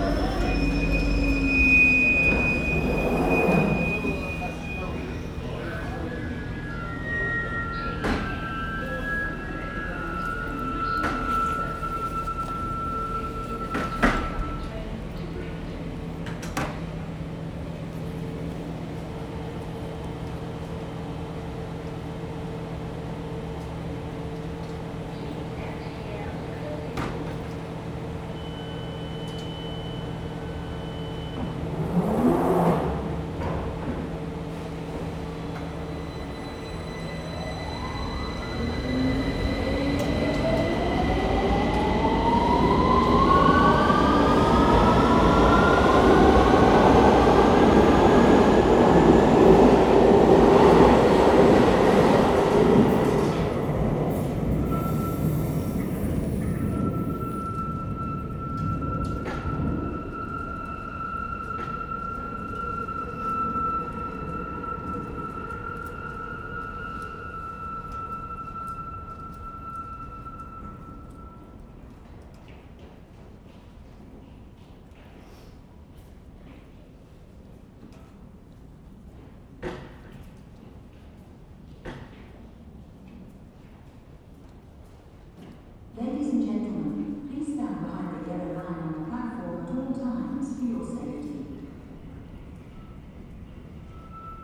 Highbury & Islington Underground Station, London, UK - Tube trains and eerie wind whistles in the tunnel
I guess these whistling sounds are caused by train created winds blowing through something flute-like in the tunnel. It's impossible to see but it does correlate with the trains entering and leaving the station. Somewhat eerie. Nobody else seems to pay it any attention though.